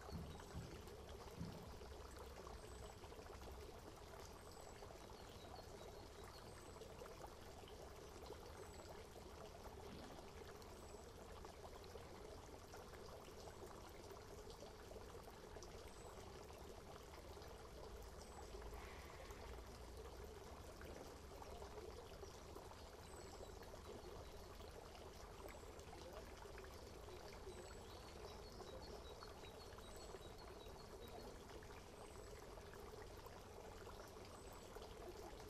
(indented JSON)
{
  "title": "villa roccabella le pradet",
  "description": "traitements des eaux de pluie",
  "latitude": "43.11",
  "longitude": "6.00",
  "altitude": "55",
  "timezone": "Europe/Berlin"
}